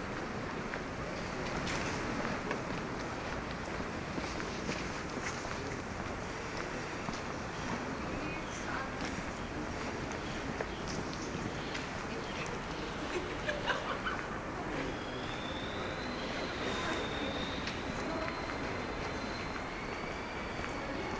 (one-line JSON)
{"title": "Böckhstraße, Berlin, Deutschland - Soundwalk Böckhstrasse", "date": "2018-02-09 15:30:00", "description": "Soundwalk: Along Böckhstrasse until Schönleinstrasse\nFriday afternoon, sunny (0° - 3° degree)\nEntlang der Böckhstrasse bis Schönleinstrasse\nFreitag Nachmittag, sonnig (0° - 3° Grad)\nRecorder / Aufnahmegerät: Zoom H2n\nMikrophones: Soundman OKM II Klassik solo", "latitude": "52.49", "longitude": "13.42", "altitude": "37", "timezone": "Europe/Berlin"}